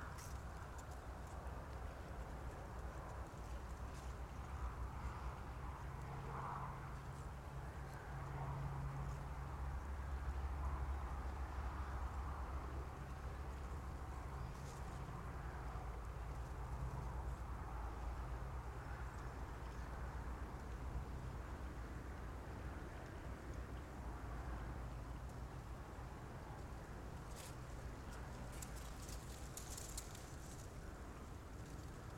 Vyžuonos, Lithuania, soundscape with shooting
a soundscape. distant traffic, close dried grass and some occasional shooting